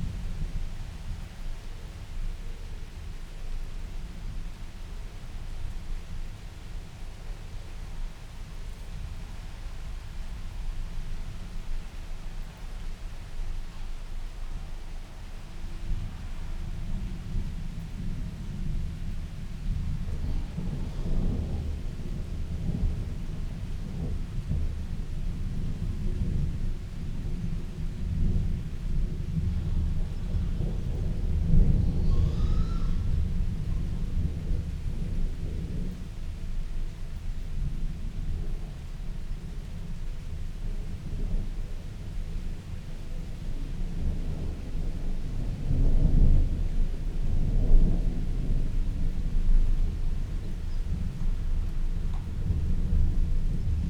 {
  "title": "Luttons, UK - inside church porch ... outside thunderstorm ...",
  "date": "2018-07-26 17:45:00",
  "description": "inside church porch ... outside thunderstorm ... open lavalier mics on T bar on mini tripod ... background noise traffic and pigs from an adjacent farm ... which maybe a bit off putting ... bird calls ... blue tit ... wood pigeon ...",
  "latitude": "54.12",
  "longitude": "-0.54",
  "altitude": "85",
  "timezone": "Europe/London"
}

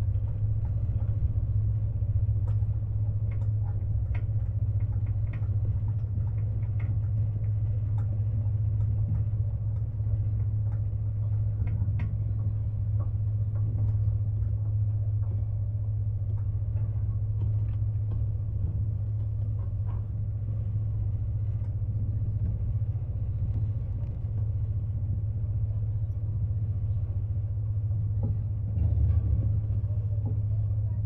{
  "title": "Upper Bay - The Inner Ferry",
  "date": "2018-06-03 15:30:00",
  "description": "Contact mic recording (Cortado MkII ).\nSounds of Staten Island Ferry's engine, some wind and metal sounds.",
  "latitude": "40.69",
  "longitude": "-74.04",
  "timezone": "America/New_York"
}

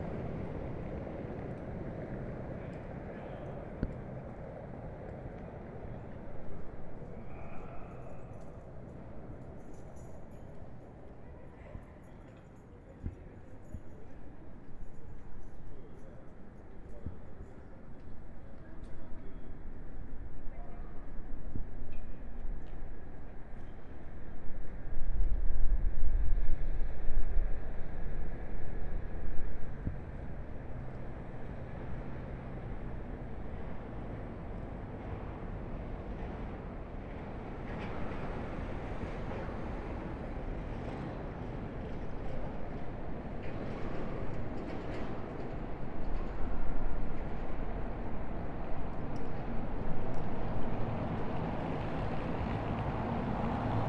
{
  "title": "Reuterstrasse: Balcony Recordings of Public Actions - No clapping Day 06",
  "date": "2020-03-26 19:05:00",
  "description": "Again no clapping in Berlin, seems people here don't establish it on a daily basis, and I also missed the bells today by 5 mins.\nI wish the cars would be less.\nRecorded again from my balcony, this time on a sound device recorder with Neumann KM 184 mics, on a cold, slightly windy, cloudless evening in times of Corona measures.",
  "latitude": "52.49",
  "longitude": "13.43",
  "altitude": "43",
  "timezone": "Europe/Berlin"
}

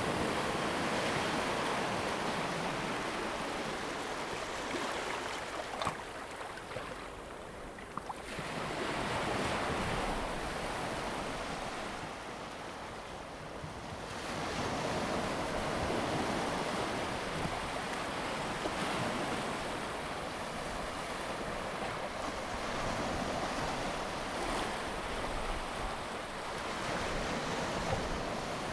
{
  "title": "Shihlang Diving Area, Lüdao Township - Diving Area",
  "date": "2014-10-30 13:47:00",
  "description": "sound of the waves\nZoom H2n MS +XY",
  "latitude": "22.65",
  "longitude": "121.47",
  "altitude": "6",
  "timezone": "Asia/Taipei"
}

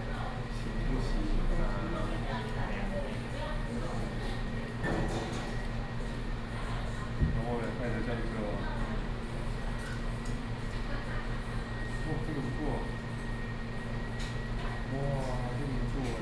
{
  "title": "Ln., Sec., Xinyi Rd., Taipei City - In the restaurant",
  "date": "2015-07-28 19:07:00",
  "description": "In the restaurant, air conditioning noise",
  "latitude": "25.03",
  "longitude": "121.54",
  "altitude": "22",
  "timezone": "Asia/Taipei"
}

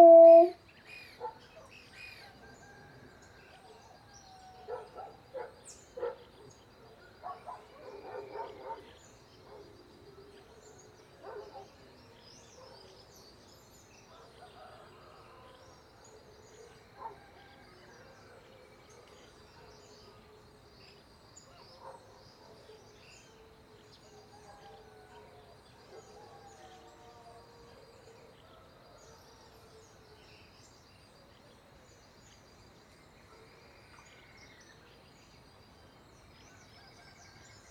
{
  "title": "Tangara, Rio Acima, Brazil - Dog singing during the night",
  "date": "2018-12-22 04:00:00",
  "description": "A dog is answering to other dogs, howling far away on the other side of the valley... Mel (the dog) is answering and singing to them too... during a summer night in Minas Gerais, in the Brazilian countryside.\nRecorded by an ORTF setup Schoeps CCM4 x 2\nOn a Sound Devices 633\nRecorded on 22nd of December 2018\nSound Ref: BR-181222T02",
  "latitude": "-20.11",
  "longitude": "-43.73",
  "altitude": "1085",
  "timezone": "GMT+1"
}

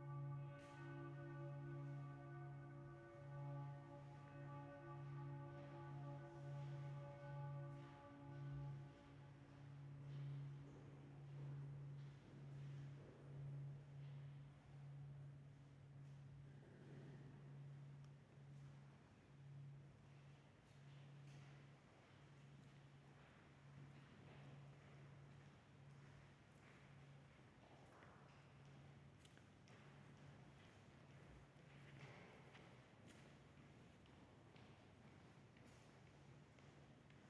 {
  "title": "Stiftkirche St. Peter, Salzburg, Österreich - Raumklang Stiftkirche",
  "date": "2007-04-17 11:50:00",
  "description": "Touristen, Glocken, Schritte. Am Schluss Priester mit Gehrock durchschreitet das Kirchenschiff.",
  "latitude": "47.80",
  "longitude": "13.04",
  "altitude": "449",
  "timezone": "Europe/Vienna"
}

